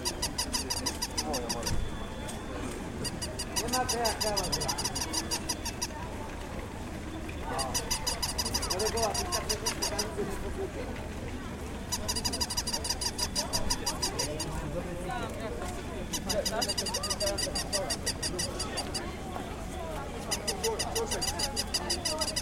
Zakopane, Krupówki, Pieski Szczekające/Barking Puppy Toys
Zakopane, Poland, 20 July 2011, ~14:00